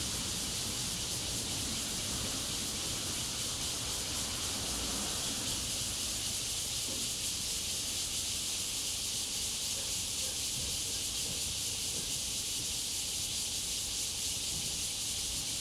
月眉國小, Guanshan Township - Cicadas sound
Cicadas sound, Traffic Sound, In elementary school, Very hot weather
Zoom H2n MS+ XY